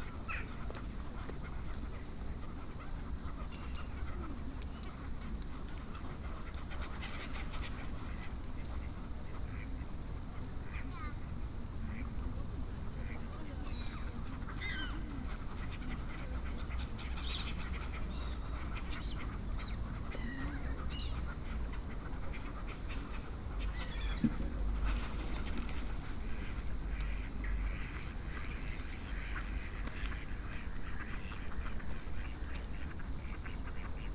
Johannes Kepler Universität Linz, Linz, Österreich - teich
universität linz, teich